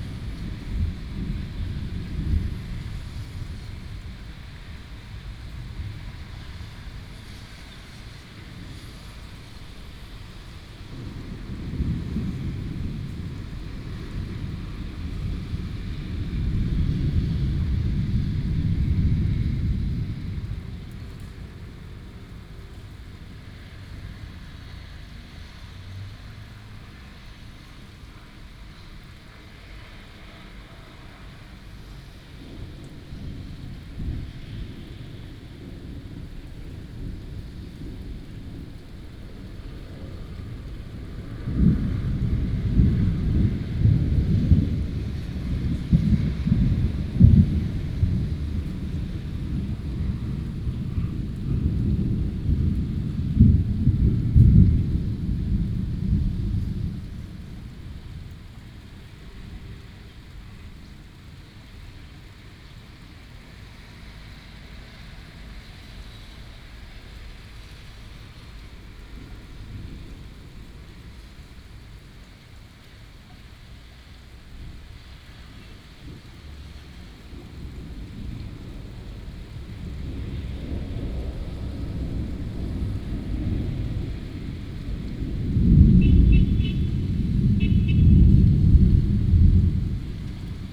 Rende 2nd Rd., Bade Dist., Taoyuan City - Thunderstorms
This month is almost thunderstorms every afternoon, birds sound, Thunderstorms, Traffic sound